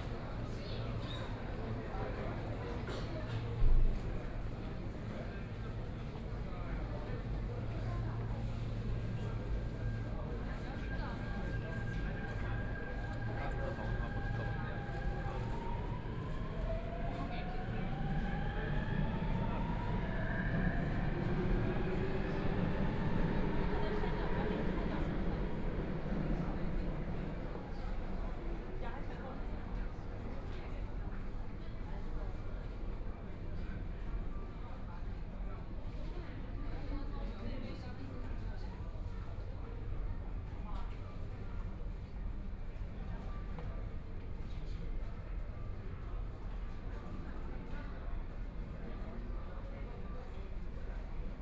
Walking in the subway station, Binaural recording, Zoom H6+ Soundman OKM II